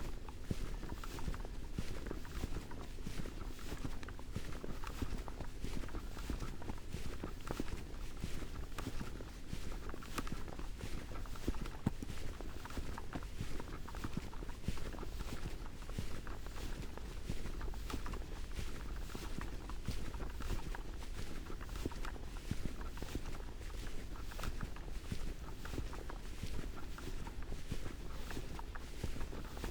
walks with a parabolic ... horse and rider following from distance then eventually catching up ... bird calls ... blue tit ... yellowhammer ... collared dove ... tree sparrow ... background noise ... footfalls ... recordist ... all sorts ...
England, United Kingdom, 30 December